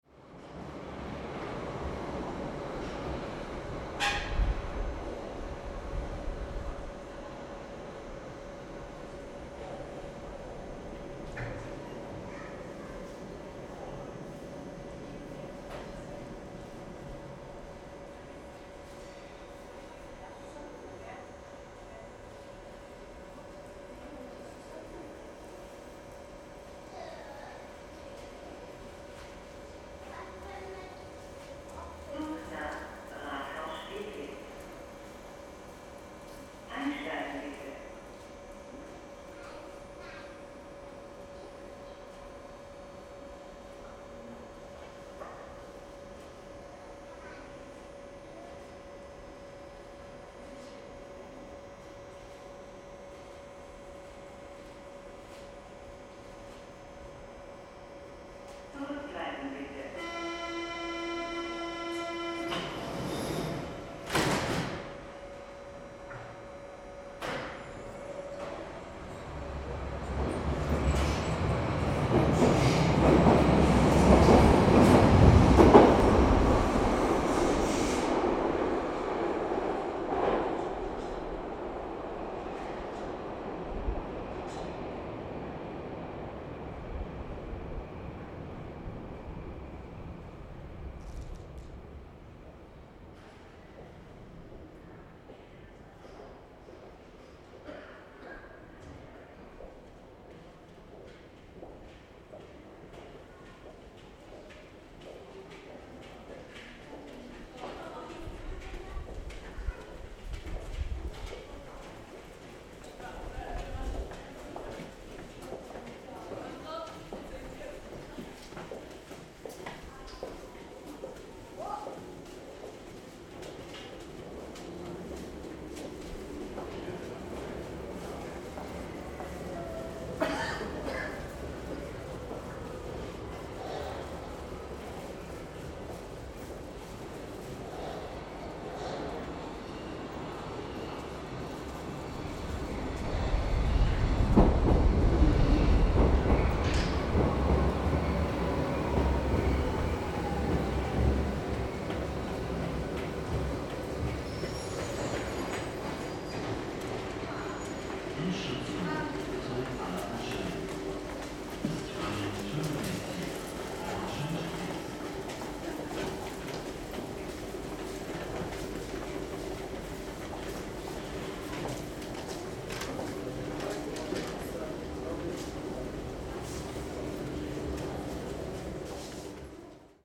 osloer str. - zwischenetage U8/U9
17.03.2009 18:45 u-bahnstation osloer str., linie u8 und u9, treppenbereich zwischen den bahnsteigen / subway station osloer str., platform between the lines u8 and u9